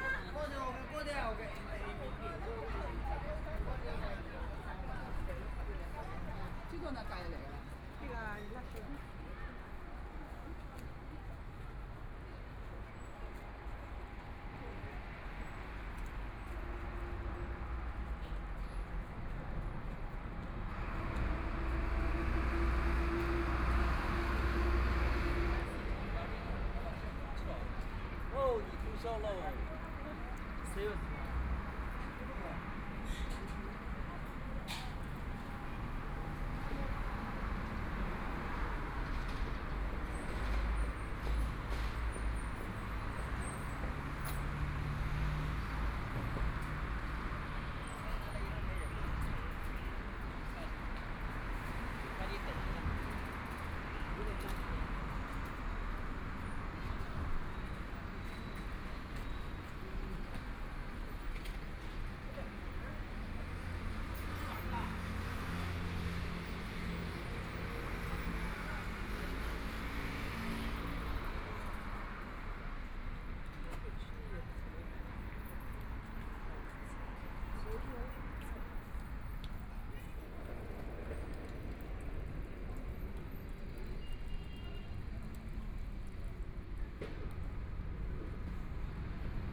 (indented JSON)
{
  "title": "Kongjiang Road, Yangpu District - walking on the road",
  "date": "2013-11-26 11:24:00",
  "description": "Walking on the street, various shops walking between residents, Traffic Sound, Binaural recording, Zoom H6+ Soundman OKM II",
  "latitude": "31.28",
  "longitude": "121.52",
  "altitude": "8",
  "timezone": "Asia/Shanghai"
}